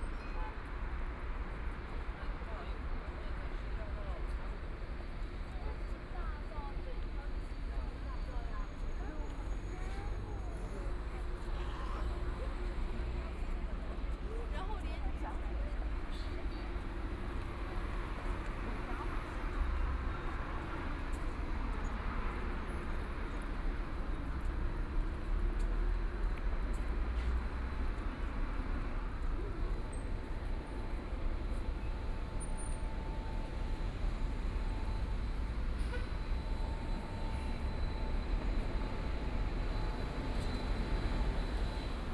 Pudong South Road, Pudong New Area - walk
Noon time, in the Street, Footsteps, Traffic Sound, Rest time, Street crowd eating out, Binaural recording, Zoom H6+ Soundman OKM II